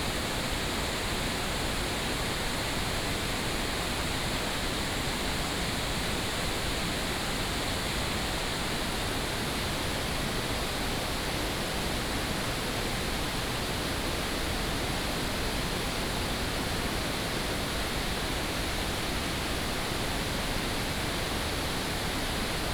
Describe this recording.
waterfall, Binaural recordings, Sony PCM D100+ Soundman OKM II